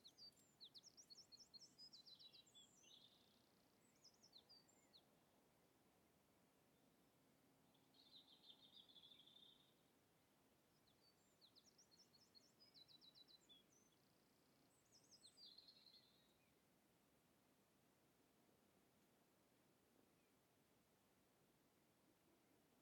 Lisburn, Reino Unido - Derriaghy Dawn-02
Field Recordings taken during the sunrising of June the 22nd on a rural area around Derriaghy, Northern Ireland
Zoom H2n on XY